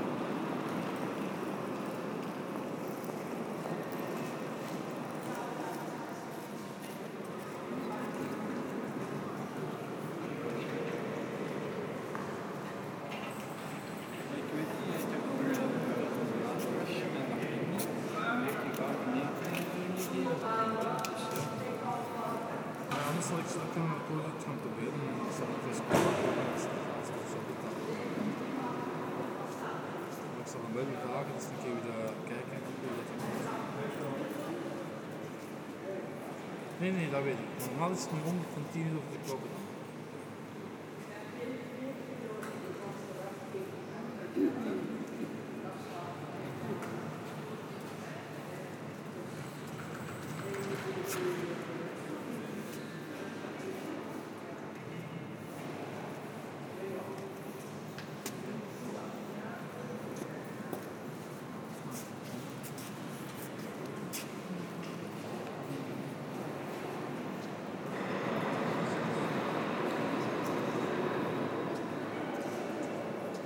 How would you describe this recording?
Very heavy construction works in the Oostende station, cold and foggy weather. On the platform 5 a train is leaving the station to Eupen.